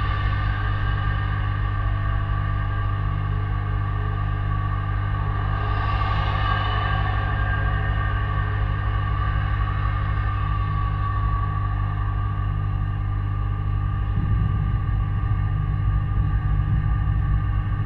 Karaliaus Mindaugo pr., Kaunas, Lithuania - Pedestrian bridge railing drone
Dual contact microphone recording of pedestrian bridge metal railing. Steady droning hum and resonating noises of cars passing below the bridge.